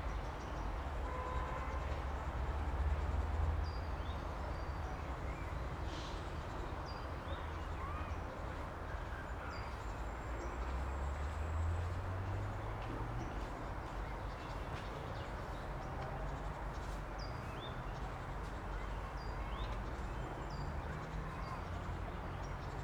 berlin: insulaner - the city, the country & me: in front of wilhelm-foerster-observatory
wind blows through trees, traffic noise in the distance
the city, the country & me: may 10, 2015